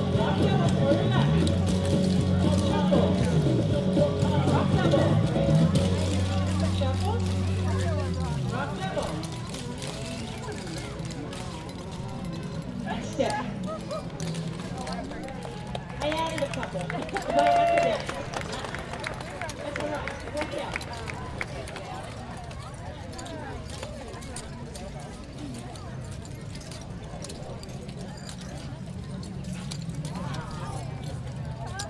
neoscenes: tap dancers on Courthouse Square
AZ, USA